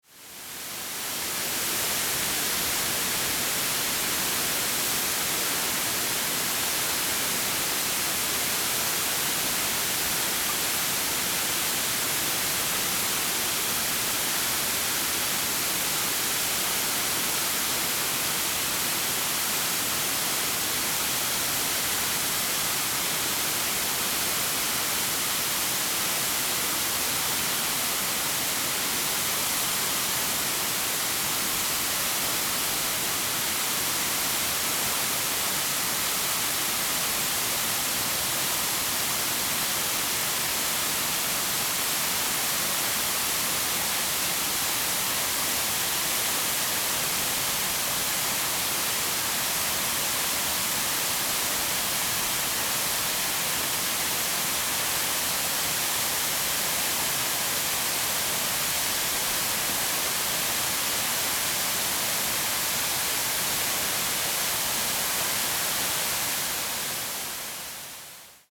FengHuang Waterfall, Fenglin Township - Waterfall
Waterfall
Zoom H2n MS+XY +Sptial Audio
Hualien County, Taiwan, 14 December 2016, 12:31